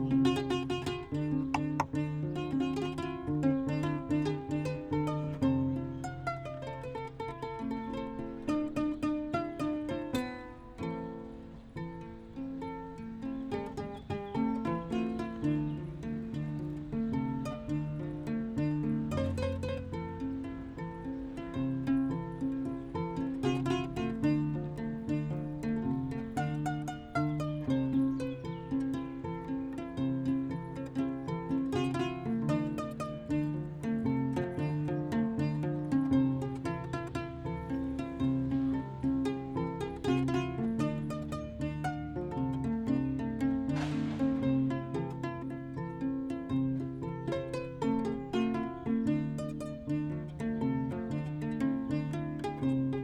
Hasenheide, Berlin, Deutschland - Aba plays the Kora
Berlin, Hasenheide Park, entrance area, Aba plays the Kora, a western african string instrument, while his kids are having a little fleemarket.
(SD702, Audio Technica BP4025)